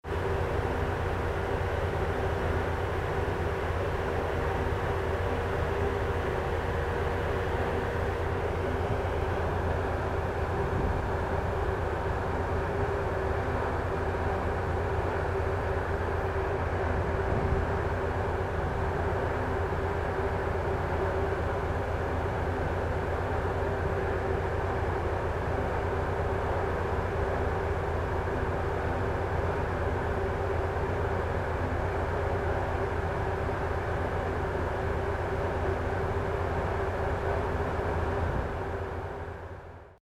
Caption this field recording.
recorded on night ferry trelleborg - travemuende, august 10 to 11, 2008.